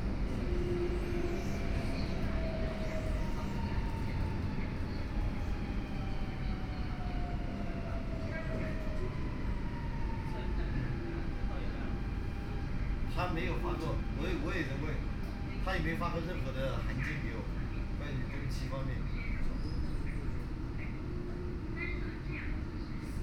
Siping Road, Yangpu District - Line 10(Shanghai metro)
from Wujiaochang station to Siping Road station, Binaural recording, Zoom H6+ Soundman OKM II